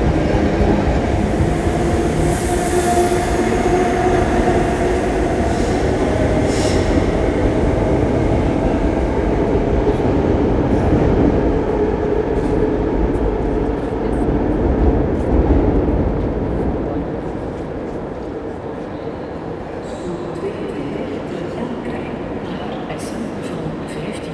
{"title": "Antwerpen, Belgique - SNCB Antwerpen Centraal Station", "date": "2018-08-04 16:34:00", "description": "Soundscape of the Antwerpen Centraal station. In first, the very big cupola, with intense reverberation. After on the platform, a train leaving the station, to Breda in the Nederlands.", "latitude": "51.22", "longitude": "4.42", "altitude": "9", "timezone": "GMT+1"}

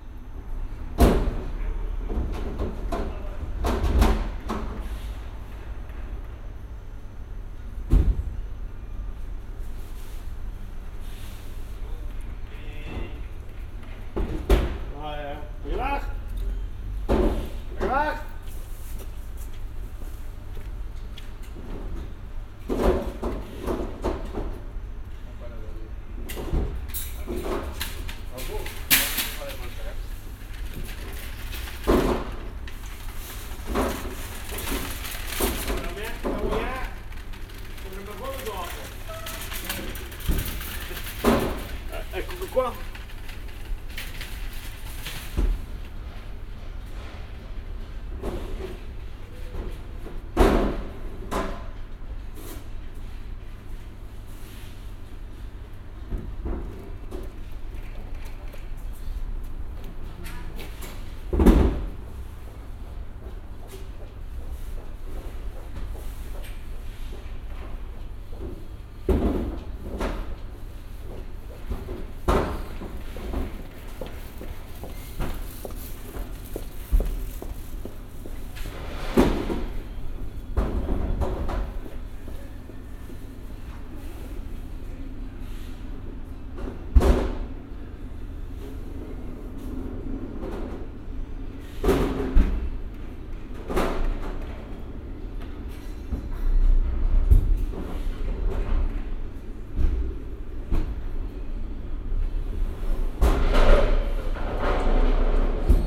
{"title": "Saint-Gilles, Belgium - Frozen Food", "date": "2012-12-17 14:40:00", "description": "Frozen Food Trade in Belgradostreet\nBinaural Recording", "latitude": "50.83", "longitude": "4.33", "altitude": "23", "timezone": "Europe/Brussels"}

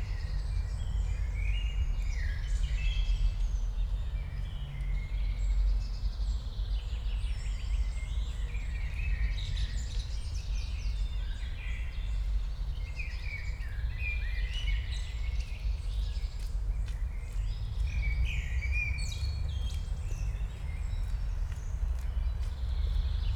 {"title": "park Miejski, Fryderyka Chopina, Siemianowice Śląskie - park ambience", "date": "2019-05-21 11:15:00", "description": "Siemianowice, Miejski park, ambience /w distant city traffic\n(Sony PCM D50, DPA4060)", "latitude": "50.31", "longitude": "19.03", "altitude": "273", "timezone": "Europe/Warsaw"}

